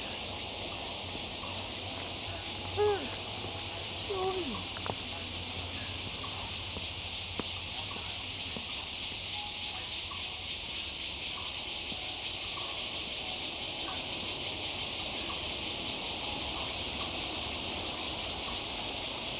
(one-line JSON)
{"title": "Fuyang Eco Park, 大安區台北市 - in the park", "date": "2015-07-17 07:30:00", "description": "Many elderly people doing exercise in the park, Bird calls, Cicadas cry, Traffic Sound\nZoom H2n MS+XY", "latitude": "25.02", "longitude": "121.56", "altitude": "35", "timezone": "Asia/Taipei"}